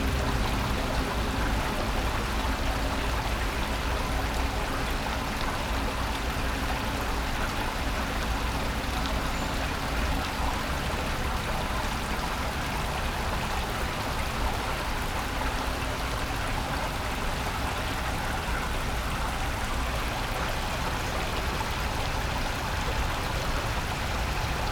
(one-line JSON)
{"title": "竹圍, Tamsui Dist., New Taipei City - Next to the track", "date": "2012-04-19 20:01:00", "description": "Next track, Traveling by train, Water sound\nBinaural recordings\nSony PCM D50 + Soundman OKM II", "latitude": "25.14", "longitude": "121.46", "altitude": "5", "timezone": "Asia/Taipei"}